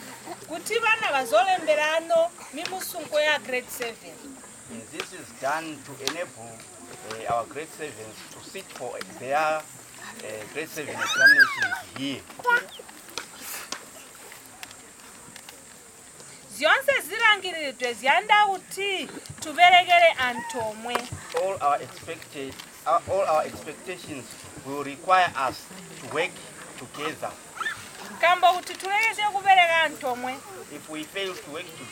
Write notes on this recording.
…we are witnessing an award ceremony at Damba Primary School, a village in the bushland near Manjolo… ...the head mistress of the school speaks about the teaching, and especially on the all over use of the English language in all subject, except for ChiTonga… during her speech a women from the village begins walking around splashing water on the ground… (later I learn that this is a ritual appeasing the ancestral spirits…)